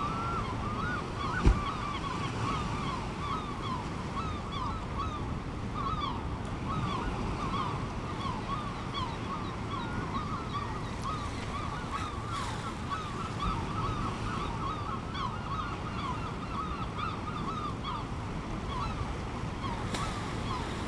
Whitby, UK - 30 minutes on East Pier ... Whitby ...
30 minutes on Whitby East pier ... waves ... herring gull calls ... helicopter fly thru ... fishing boats leaving and entering the harbour ... open lavalier mics clipped to sandwich box ...